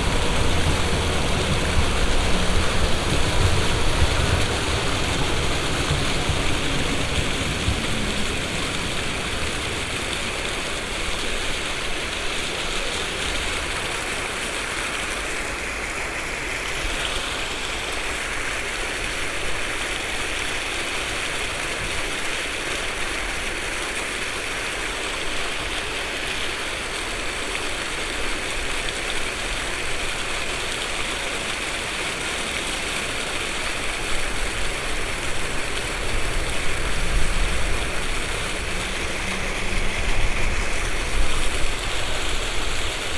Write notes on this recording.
hard to believe by this not current google snapshot, but here will appear a modern fountain archiecture next to a tram stop, soundmap d: social ambiences/ in & outdoor topographic field recordings